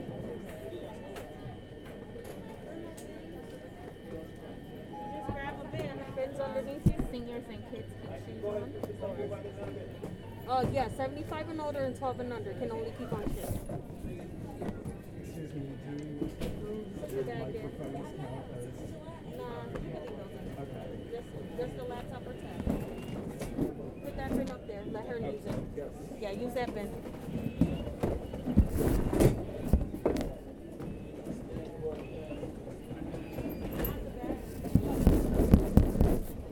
Hartsfield-Jackson Atlanta International Airport, N Terminal Pkwy, Atlanta, GA, USA - At The Security Checkpoint
Arriving at the security checkpoint after waiting in line. This was captured on a Moto G7 Play with the Field Recorder app installed in order to ensure decent audio quality. In this recording, the phone is placed in a bin and brought through the bag checking machine. The acoustics are altered by the placement of the device within the bin. TSA officials and air travelers are heard from all directions.
18 August 2022, ~12:00